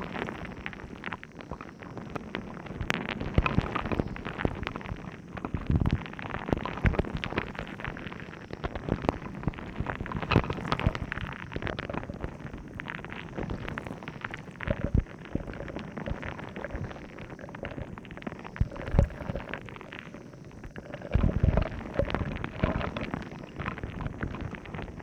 kill van kull staten island
waves hydrophone recording